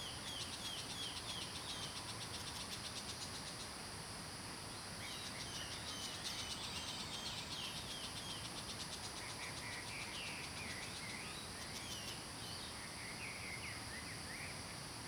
桃米里, Puli Township, Taiwan - Early morning
Early morning, Birds singing
Zoom H2n MS+XY